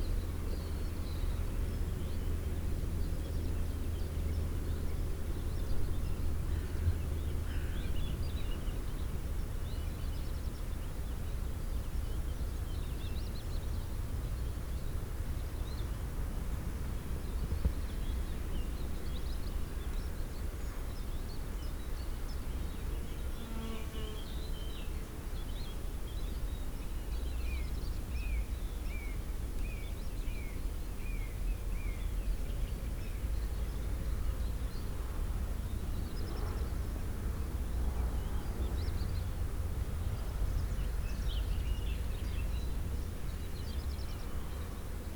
Route Du Capitani, Monferran-Savès, France - lockdown 1 km - noon - angelus rings
recorded during first lockdown, in the field between National 124 and the village (1km from the church was the limit authorized). Zoom H6 capsule xy
2 April 2020, ~12:00